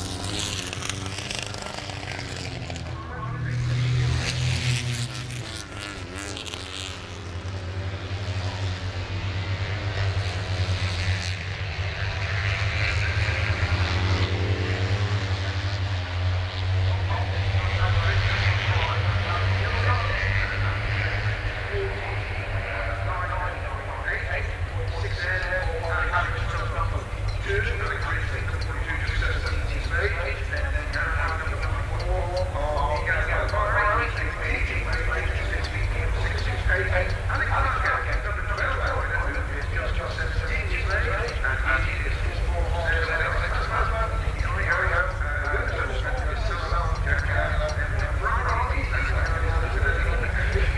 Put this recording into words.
moto3 warmup 2013 ... lavalier mics ...